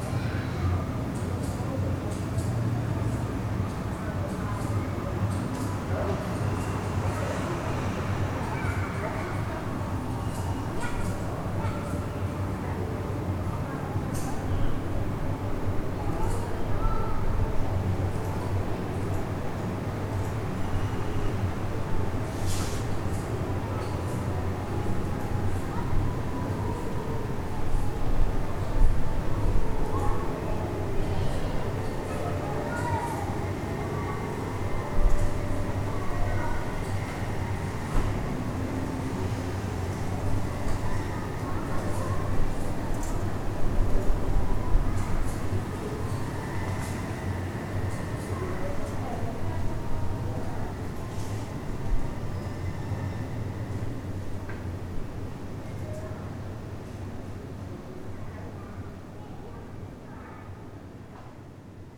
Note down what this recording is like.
recording from my balcony. with somebody playing soprano saxophone and distant sounds from the annual rotterdam city race.